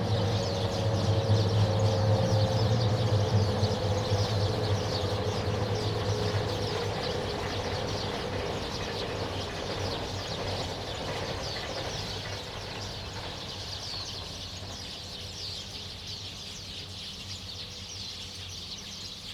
東里村, Fuli Township - Birdsong
After the rain, Birdsong, Traffic Sound
Zoom H2n MS +XY